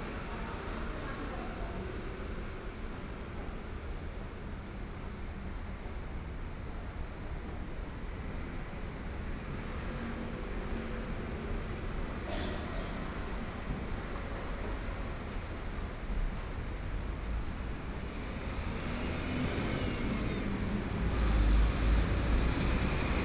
from Gangqian Station to Wende Station, then Go outside to the station, Traffic Sound
Please turn up the volume a little. Binaural recordings, Sony PCM D100+ Soundman OKM II